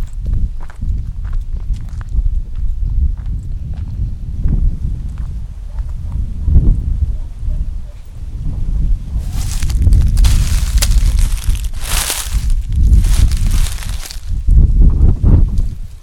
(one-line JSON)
{"title": "a walk in the woods", "date": "1999-09-18 17:42:00", "latitude": "42.84", "longitude": "13.75", "altitude": "240", "timezone": "Europe/Rome"}